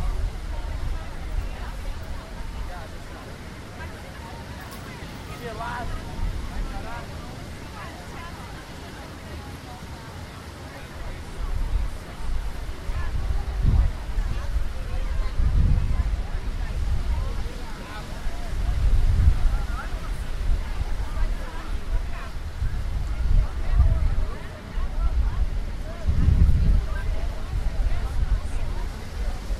Ipanema, RJ. - Dois de Fevereiro
- Ipanema, Rio de Janeiro, Brazil, February 2013